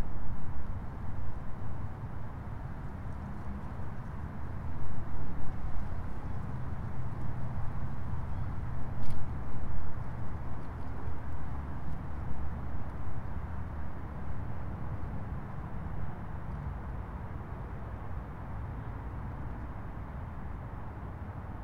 Tolleson Park, McCauley Rd, Smyrna, GA, USA - 2020 Winter Solstice Stargaze
A member of my family and I were here in order to view the great conjunction between Jupiter and Saturn on the solstice. A couple of other families were also here for the viewing, but they leave at the beginning of the recording. There's a little bit of wind blowing the leaves around and traffic is heard in the background. Taken with the onboard unidirectional mics of the Tascam Dr-100mkiii.
Georgia, United States